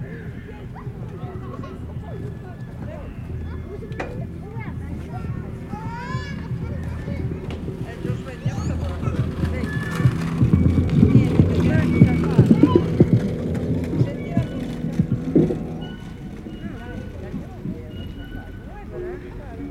Jardin des Plantes Joséphine Baker, Rue des Dauphins, Grenoble, France - Jeux d'enfants
Dans le Jardin des Plantes au cours de Paysages Composés organisé par Apnées .